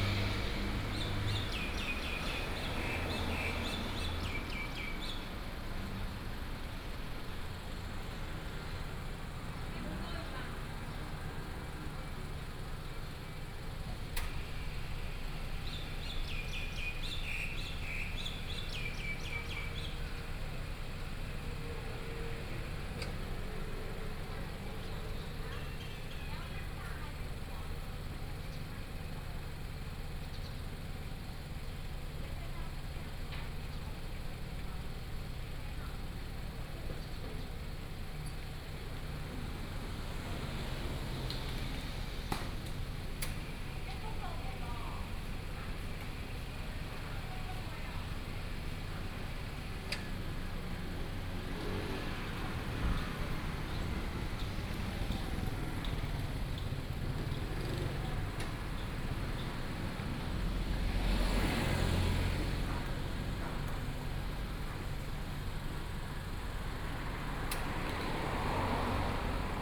太麻里街74-78號, Tavualje St., Taimali Township - Morning street
Morning street, Traffic sound, Bird cry, Seafood shop
Binaural recordings, Sony PCM D100+ Soundman OKM II
Taitung County, Taiwan, 1 April 2018